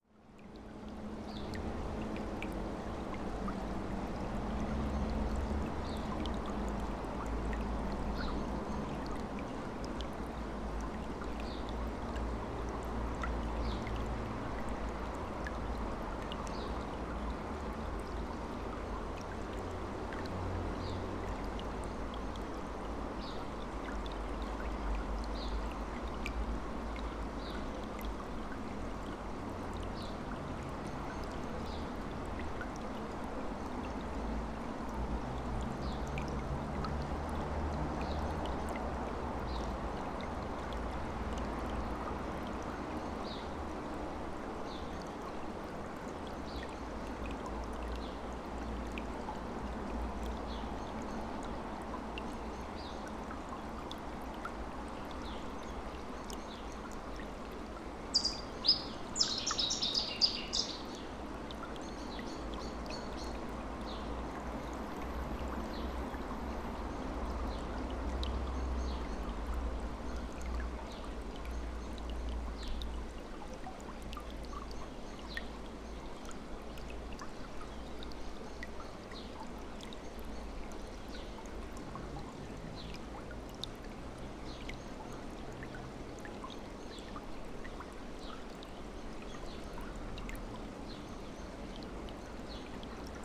At this point, beneath the road there is a stream flowing during the wet season. Stressed cars and busy people passing by over, only rats & birds take the time to rest on the peaceful chants of the water going down to the sea.